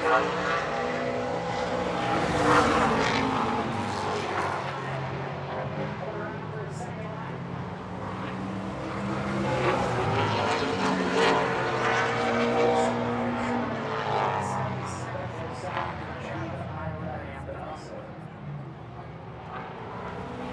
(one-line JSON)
{"title": "Madison International Speedway - ARCA Midwest Tour Qualifying", "date": "2022-05-01 12:45:00", "description": "Qualifying for the Joe Shear Classic ARCA Midwest Tour Super Late Model Race at Madison International Speedway. The cars qualify one at a time each getting two laps to set a time.", "latitude": "42.91", "longitude": "-89.33", "altitude": "286", "timezone": "America/Chicago"}